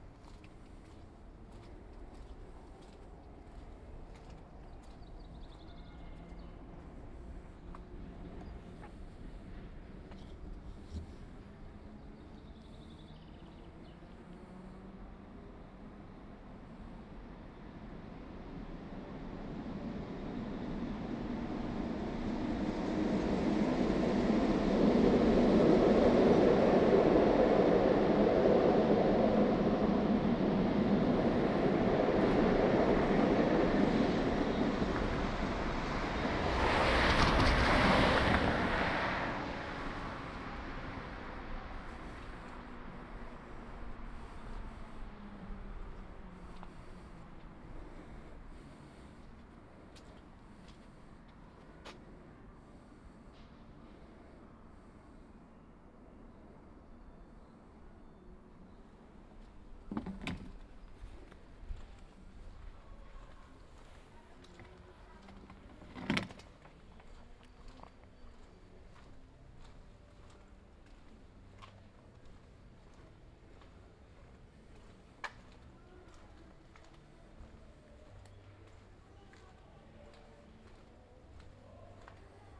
Ascolto il tuo cuore, città. I listen to your heart, city. Several chapters **SCROLL DOWN FOR ALL RECORDINGS** - Afternoon walk with bottles in the garbage bin in the time of COVID19 Soundwalk
"Afternoon walk with bottles in the garbage bin in the time of COVID19" Soundwalk
Chapter XLV of Ascolto il tuo cuore, città. I listen to your heart, city
Monday April 13th 2020. Short walk in San Salvario district in Pasquetta afternoon, including discard of bottles waste, thirty four days after emergency disposition due to the epidemic of COVID19.
Start at 2:36 p.m. end at 3:00 p.m. duration of recording 23'34''
The entire path is associated with a synchronized GPS track recorded in the (kml, gpx, kmz) files downloadable here: